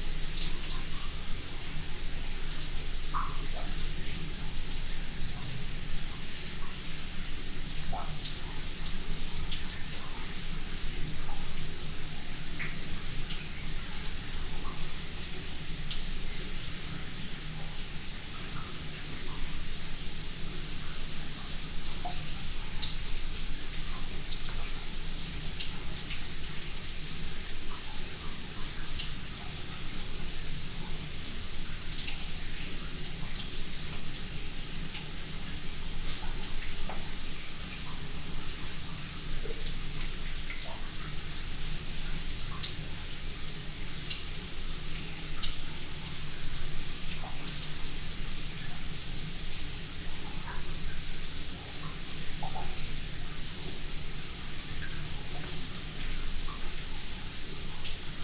stolzembourg, old copper mine, water dripping
The sound of dripping water inside a side drift of the mine. - La mine de cuivre - De Kuipermijn
Stolzemburg, alte Kupfermine, Wassertropfen
Das Geräusch von tropfendem Wasser in einem Seitenteil der Mine.
Stolzembourg, ancienne mine de cuivre, eau qui goutte
Un bruit d’eau qui goutte dans une galerie latérale de la mine.
Project - Klangraum Our - topographic field recordings, sound objects and social ambiences